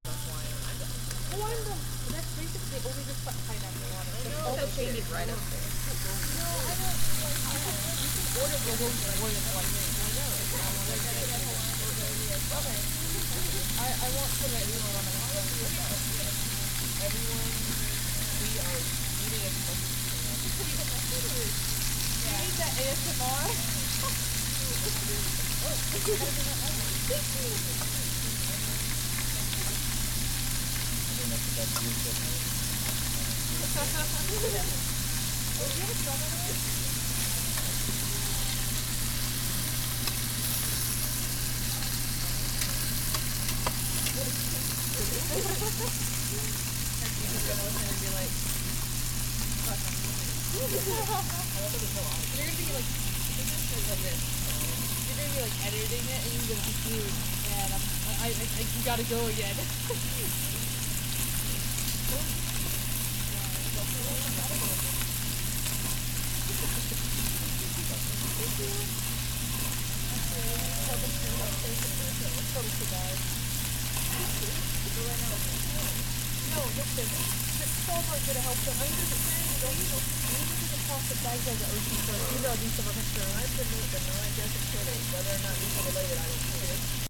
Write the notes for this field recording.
At Q Korean Barbecue in Cumming, a group of five friends sit along the table and excitedly wait for their first meat of the all you can eat dinner special to cook. The brisket simmers on a hot plate in the middle, people occasionally stirring it so the bottom doesn't burn.